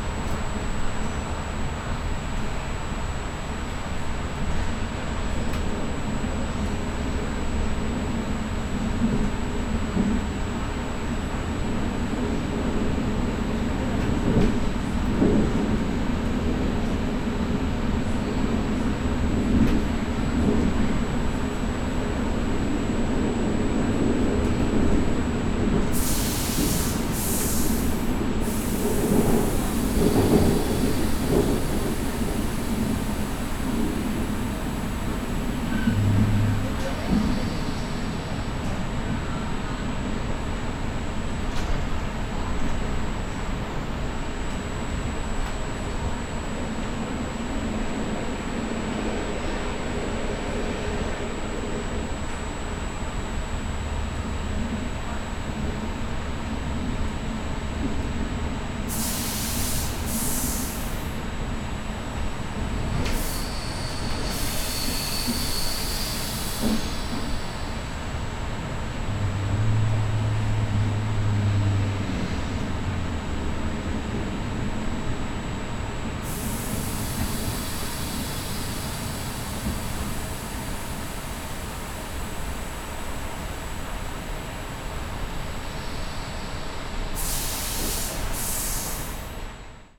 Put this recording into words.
smooth ride on a train to Corniglia. the car is empty and very well isolated. almost no sounds are coming from the outside. yet the electronic circuits, other mechanisms and the body of the car produce many other sounds. continuous high pitched buzz, pressurized air blasts, "light saber" clangs.